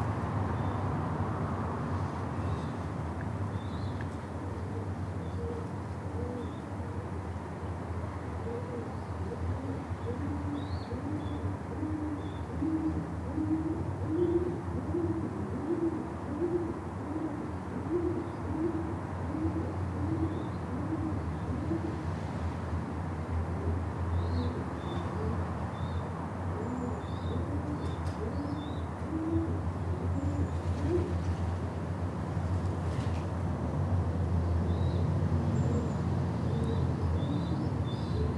I always enjoy the coos and flutters of the pigeons living under the bridge on my way into town. I decided to pause and record the squeaking of the babies, the fluttering and cooing of the adults. In this recording I am standing under the bridge (hence traffic rumble) and my EDIROL R-09 is perched inside a new waste-paper basket that I just bought. I put the EDIROL R-09 in there because I didn't want lots of sounds of me rustling about in the recording.